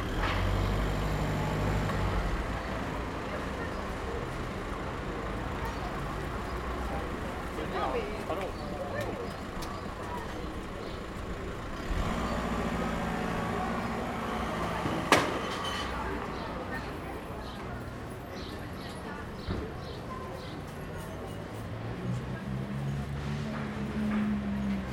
Recording the street ambience at 'A La Tour Eiffel' Café - Zoom H1